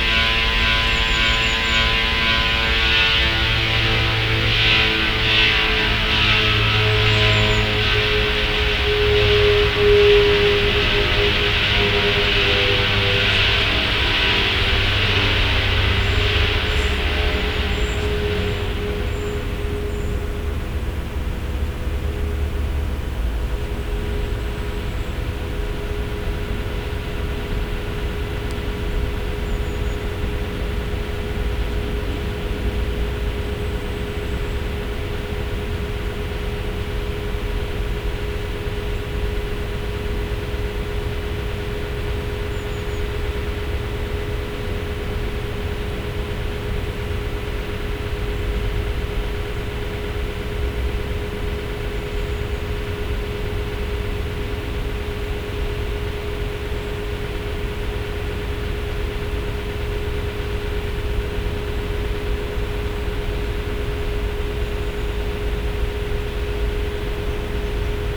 Lithuania, Utena, strange industrial sound
biking through the forest Ive heard this industrial sound
5 November, 15:30